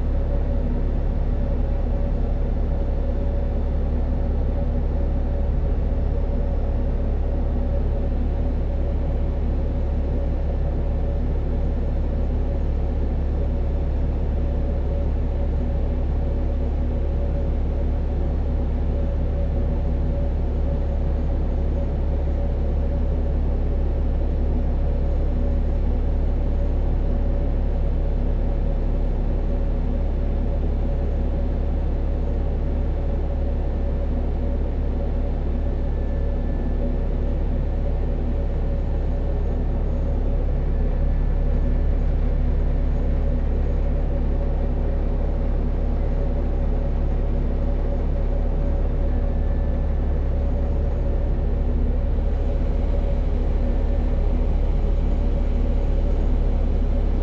Świnoujście, Polska - Ferry Tales
Zoom F6, Superlux S502, Rode NTG4. Karsibor 4 Ferry on the go. Karsibór ferry is one of my very first and intimate noise experiences. Since next summer it will be shut down. So I decided to record it as extensibely as much as posiible and prepare VR sound experience. This is one of first day (night) recordings. Hot and calm august nigth, no people, empty ferry.
Just before the Ferry stops running (someday in 06.2023) I would like to arrange a festival of listening to it. Stay tuned.
województwo zachodniopomorskie, Polska, 9 August 2022, 23:54